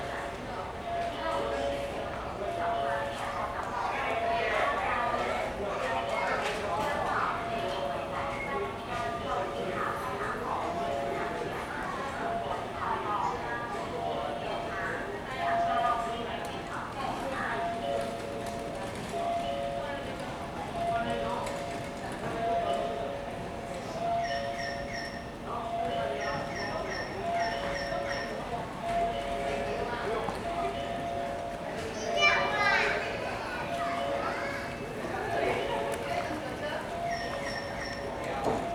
Fengshan Station - Platform
in the station platform waiting for the train, Sony Hi-MD MZ-RH1, Rode NT4